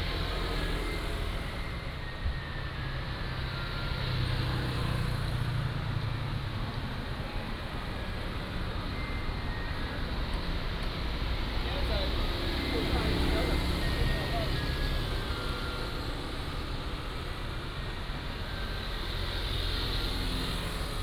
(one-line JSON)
{"title": "Minzu Rd., Pingtung City - Traffic Sound", "date": "2014-10-31 20:11:00", "description": "Traffic Sound\nBinaural recordings\nSony PCM D100+ Soundman OKM II", "latitude": "22.67", "longitude": "120.48", "altitude": "32", "timezone": "Asia/Taipei"}